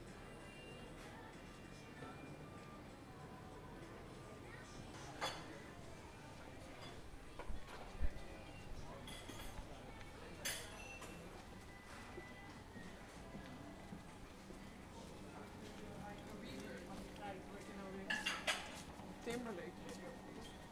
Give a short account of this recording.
in front of a media market strange noises distort the recording or better: the recording becomes a sensor for the radiation that distorts the sound